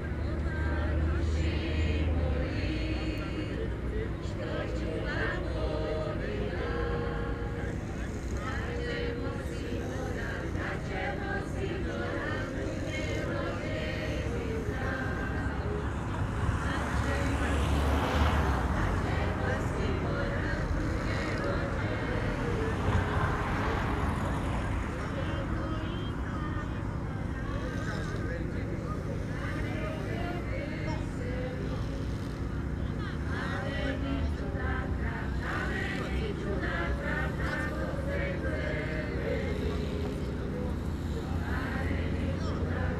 Maribor, Vojasniska ulica, at the river Drava - slowly passing boat and music
a strange boat, or rather a big raft, with many older people and a band is passing very slowly. the eband plays folk music, the people start to sing, the rudders are squeaking.
(SD702, DPA4060)
Maribor, Slovenia, 1 August 2012